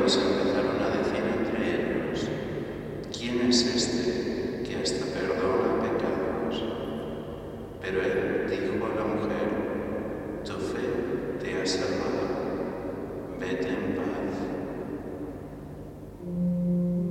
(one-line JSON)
{"title": "Calle Santo Domingo, Santo Domingo de Silos, Burgos, Spain - Misa de Monesterio de Santo Domingo de Silos, 1", "date": "2020-09-17 09:15:00", "description": "Excerpt from a morning service performed by Gregorian monks at the Monesterio de Santo Domingo de Silos, in the Picos d'Urbión, Spain.", "latitude": "41.96", "longitude": "-3.42", "altitude": "1008", "timezone": "Europe/Madrid"}